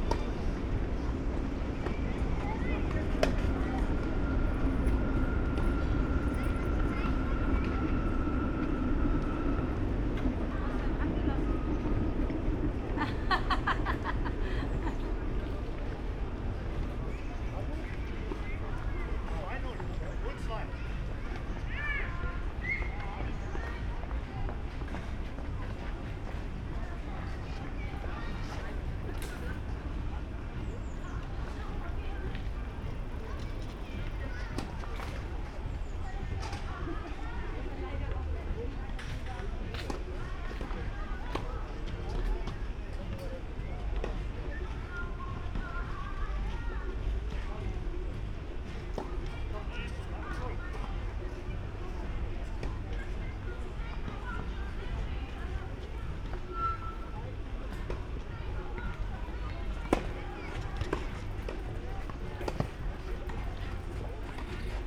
Köln, Gleisdreieck, things heard on the terrace of restaurant Olympia. The area is surrounded by busy rail tracks.
(Sony PCM D50, Primo EM172)
Köln, Germany, 20 August 2015, 19:15